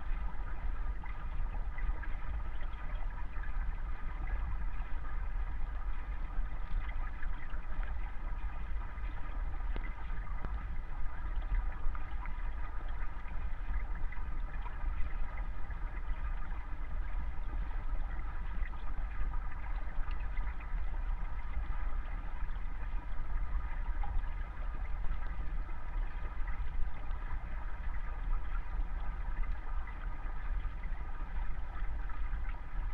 Vyzuonos, Lithuania, at the river and under the river
little waterfall at the river and the second part of recording is underwater recording of the same place
7 November 2018, 15:30